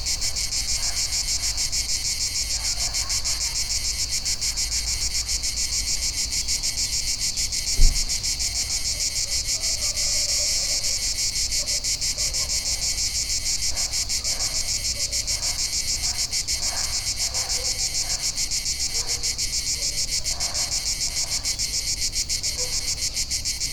Bosco, Perugia, Italien - relais s. clemente
relais s. clemente